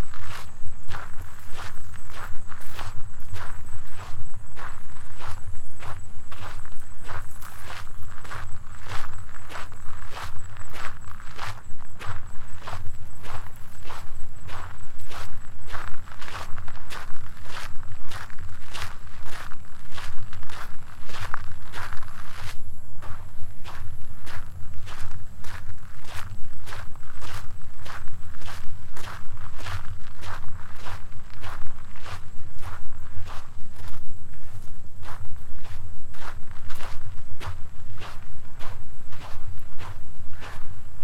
One evening after work I decided to go to this place called Pulau Melaka or in translation Melaka Island. A small man made island is currently developing (shopping malls). Trying to minimize the grip movement with the recorder attached to a mini tripod. Sandy terrain plus the raven give me the creeps.